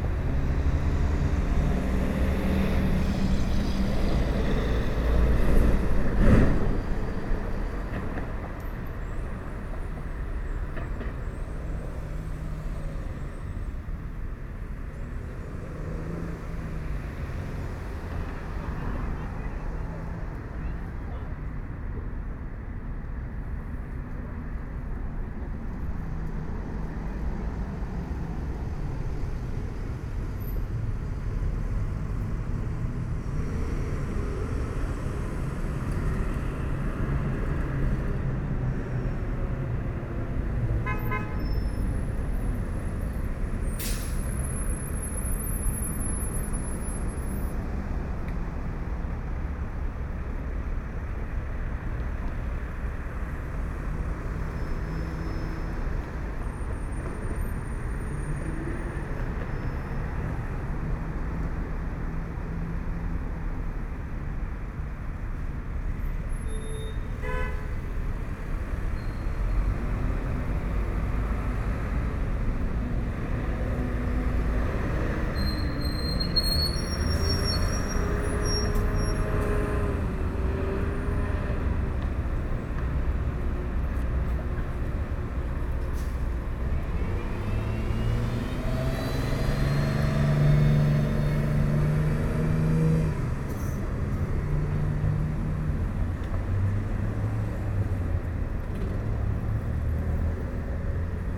equipment used: Edirol R-09HR
after a bike ride